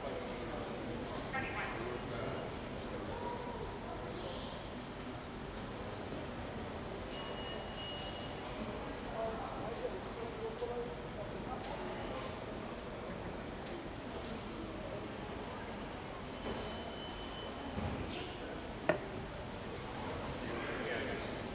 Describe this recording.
NYC, metro station 42th / 7th (times square); entrance hall, pedestrians and piano music, voice from ticket information counter;